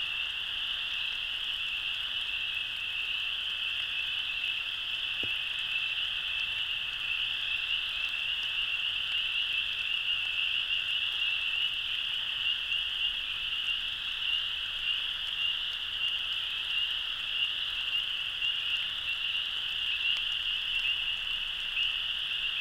Lunsford Corner, Lake Maumelle, Arkansas, USA - Middle of night frog & insect drone in Ouachita forest
Excerpt (1 am, March 19, 2020) from a 14 hour long recording made in this location using MikroUsi (Lom) mic pair attached to a tree (head-spaced) about 40 cm above ground, into a Sony A10 recorder (128 Gb micro-SD card) powered by an Anker power bank (USB connector). This is about a 10 minute period during a light rain, with cricket frogs, spring peepers (frogs), other frogs, crickets and other insects calling constantly. The entire forest is reverberating with these sounds in all directions, creating a blend of hundreds (or thousands) of sounds that drone on all evening and all night. When I was there setting up the recorder, the frogs where so (painfully) loud that I wore headphones as ear protection.